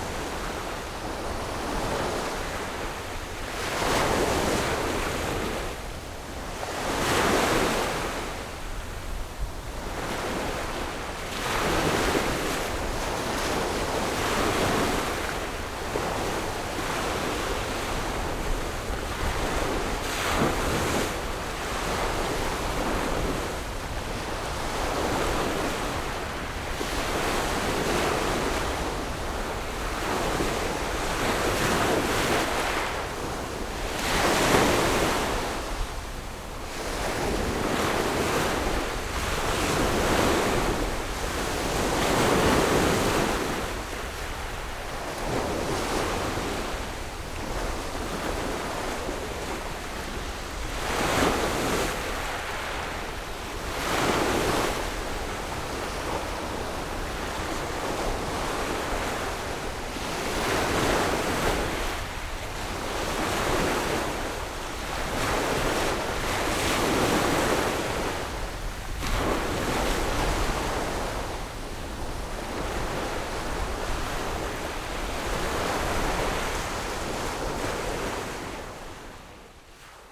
赤崁村, Baisha Township - Sound of the waves
Sound of the waves, at the beach
Zoom H6 Rode NT4
Penghu County, Baisha Township, 22 October 2014